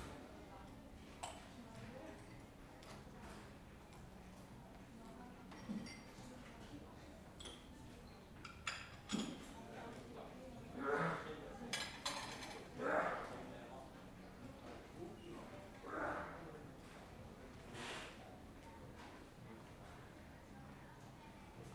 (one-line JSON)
{"title": "Hoog-Catharijne CS en Leidseveer, Utrecht, Niederlande - hallway with noise", "date": "2012-05-07 14:57:00", "description": "in front of a media market strange noises distort the recording or better: the recording becomes a sensor for the radiation that distorts the sound", "latitude": "52.09", "longitude": "5.11", "altitude": "17", "timezone": "Europe/Amsterdam"}